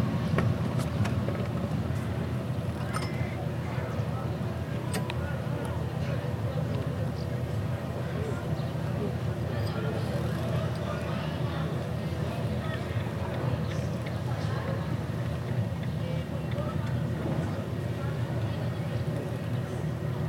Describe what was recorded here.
Recordist: Saso Puckovski, Description: Recorded on a clear day. Harbour sounds, people talking and industrial noises. Recorded with ZOOM H2N Handy Recorder.